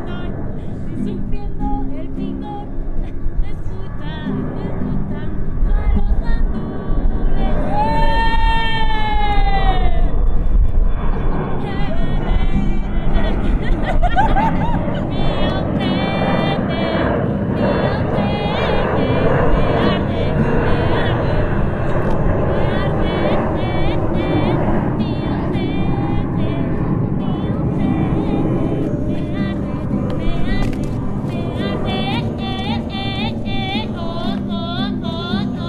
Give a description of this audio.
people in the sun... incredible version of a song of the cranberries... by maria